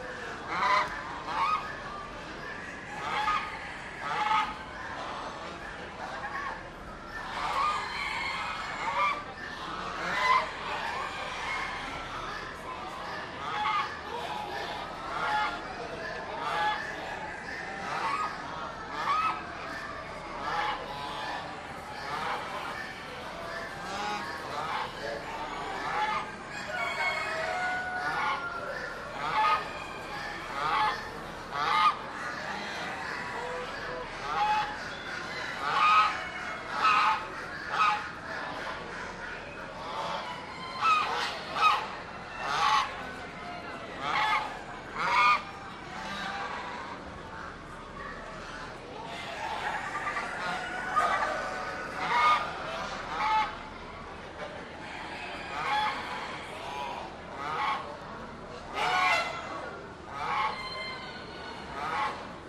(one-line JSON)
{"title": "Kansas State Fairgrounds, E 20th Ave, Hutchinson, KS, USA - Southwest Corner, Poultry Building", "date": "2017-09-09 15:57:00", "description": "A Chinese white goose talks and eats. Other poultry are heard in the background. Stereo mics (Audiotalaia-Primo ECM 172), recorded via Olympus LS-10.", "latitude": "38.08", "longitude": "-97.93", "altitude": "470", "timezone": "America/Chicago"}